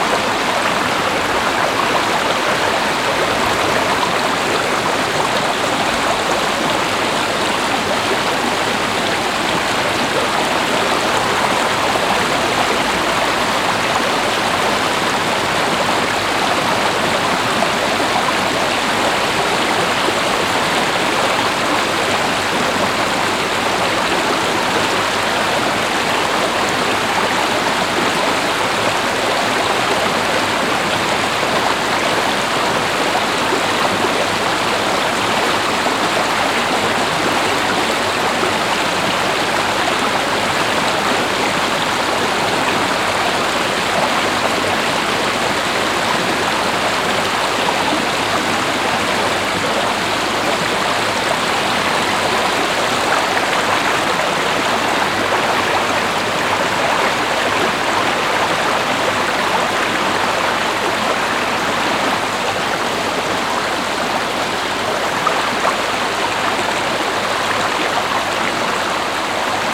Heinerscheid, Luxemburg - Kalborn, Kalborn Mill, small stream
An einem kleinen Bach der die Aufzuchstation in der Kalborner Mühle mit Wasser versorgt und dann in die Our fliesst.
At a small stream that provides water for the research and breeding station at the Kalborn Mill and then flows into the river Our.
Luxembourg, 6 August 2012